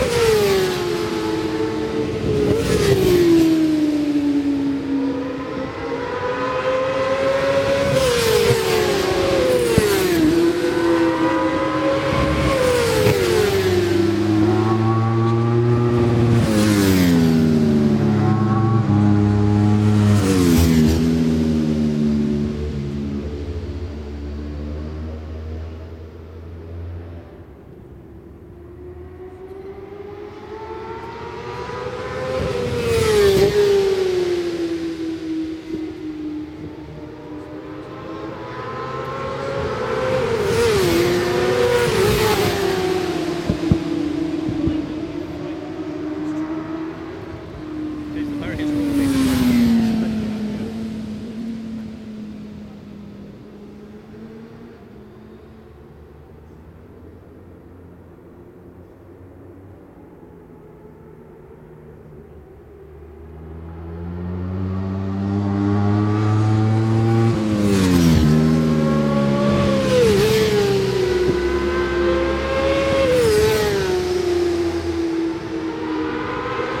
Brands Hatch GP Circuit, West Kingsdown, Longfield, UK - WSB 1998 ... Supersports 600 ... FP 3 ...
WSB 1998 ... Supersports 600 ... FP3 ... one point stereo to minidisk ... correct day ... optional time ...